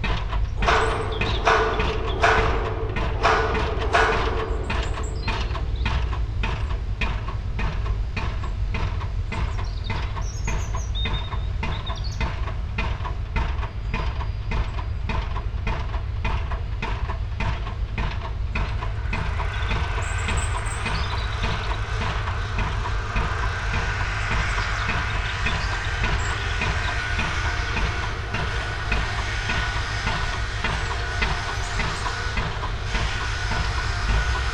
River Kennet Reading, UK - Robins and Building Construction
New industrial units are being built on an old landfill site by the river Kennet near Reading. A couple of Robins engage in winter song and the pile-driver and hammers provide an accompaniment. Sony M10 with homemade boundary array.
31 August 2016, ~09:00